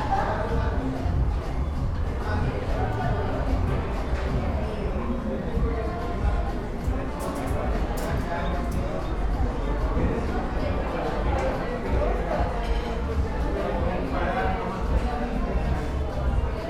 Sushi Tai Japanese restaurant.
I made this recording on april 24th, 2022, at 3:51 p.m.
I used a Tascam DR-05X with its built-in microphones and a Tascam WS-11 windshield.
Original Recording:
Type: Stereo
Esta grabación la hice el 24 de abril de 2022 a las 15:51 horas.
Guanajuato, México, 24 April 2022